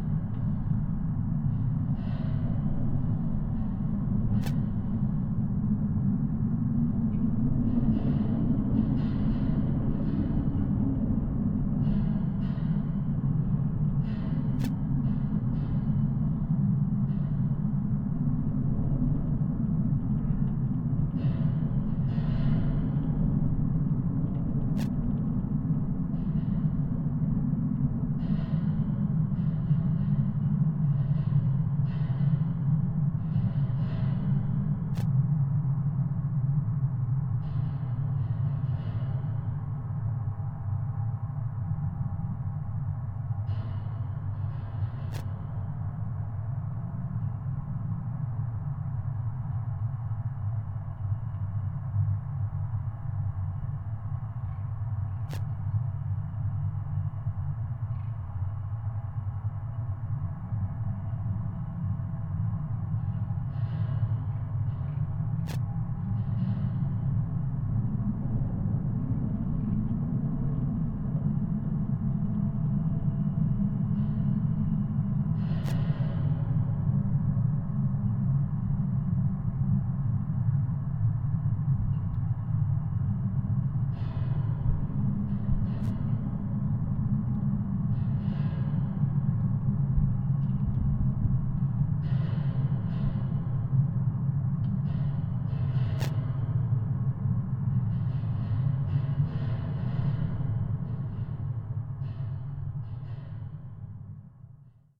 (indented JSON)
{
  "title": "Tempelhof, Berlin - fence vibration and a signal",
  "date": "2013-12-17 13:35:00",
  "description": "sound and vibrations of a long barrier fence around the planned pond area. there's a strange signal audible in the recording. i've heard it before, and first i thought it's a microphone malfunction. but it seems it's induced by activity from the nearby former radar station (or listening post?) which is odd. is it still active?\n(PCM D50, DIY contact mics)",
  "latitude": "52.48",
  "longitude": "13.40",
  "altitude": "41",
  "timezone": "Europe/Berlin"
}